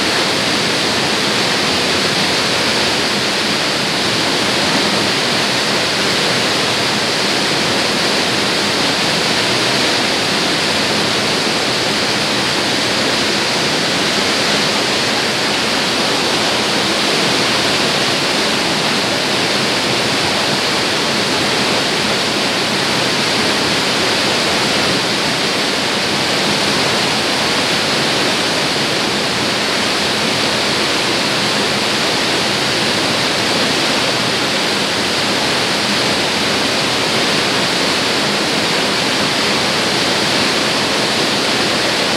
Sospirolo BL, Italia - La Soffia
The thunderous noise of the "La Soffia" waterfall.
BL, VEN, Italia, 24 August 2019